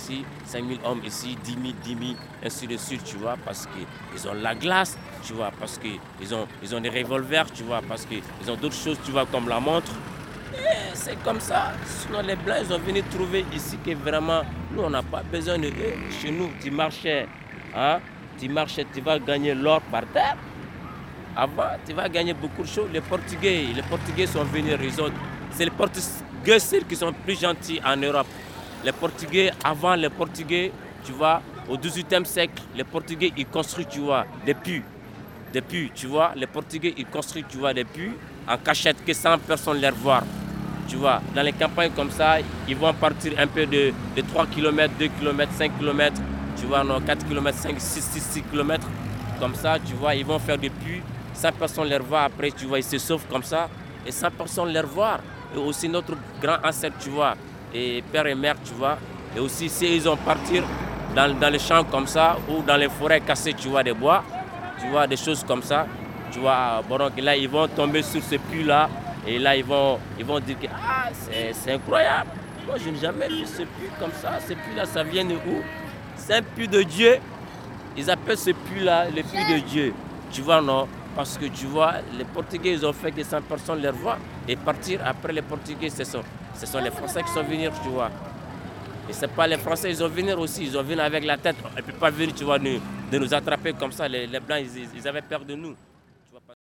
Bamako, Mali - Bamako - "Tu vois..."
Bamako - Mali
Devant la cathédrale du Sacré Cœur - rencontre inattendue